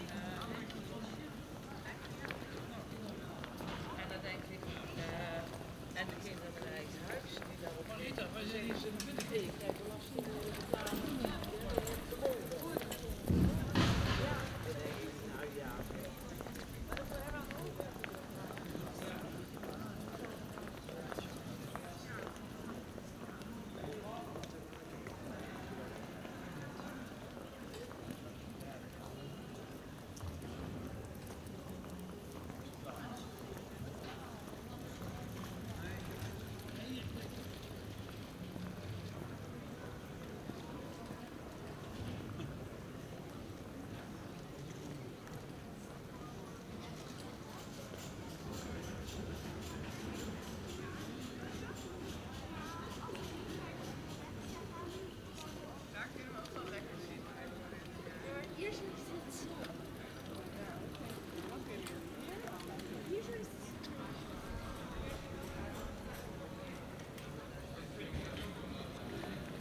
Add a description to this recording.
Pedestrians on the Binnenhof. Movers working in the background. Binaural recording.